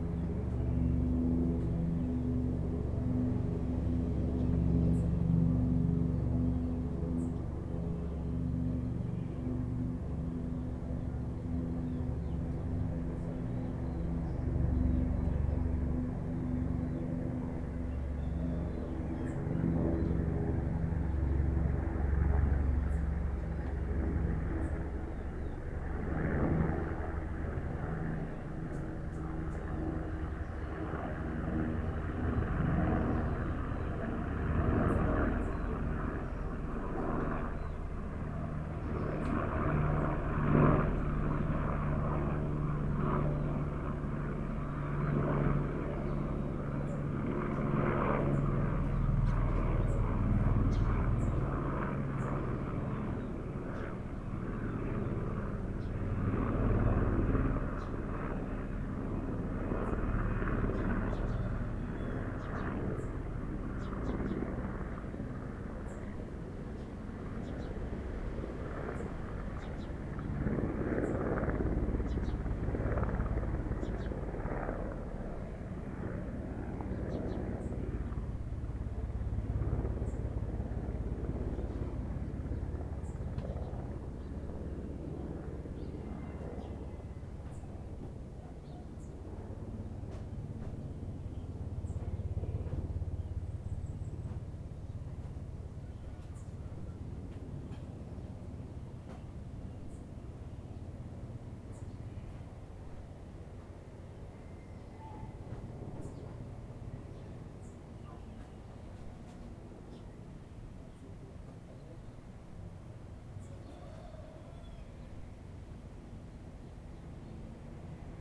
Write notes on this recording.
Comptage des hélicos entre 08h42 et 10h22 sur ce fichier son, soit 1h40 durée: 4 ULM + 1 autogire + 18 hélico tripale + 2 hélicos bipale, soit 23 survols d'aéronef. Parmi les hélicos tripales, 3 de type EC130B4 (similaire H130) et les autres sont des AS350 probablement "B3" Type "Écureuil": les nuisances aériennes se sont intensifié bouffant désormais la seconde partie de la matinée, bien au delà de 9h30 du matin. En janvier février 2020 ça semble un mauvais souvenir, mais c'est par ce qu'il pleut souvent ou que les chinois ont "le rhûme", on n'a pu que constater une dégradation qui a atteint le sommet en novembre 2019, même si les survols font moins de bruit (un peu de précautions tout de même), c'est l'invasion temporelle (ça n'en finit plus) le problème: on n'entend plus la nature, et le carillon est arrêté depuis 2014)... Moins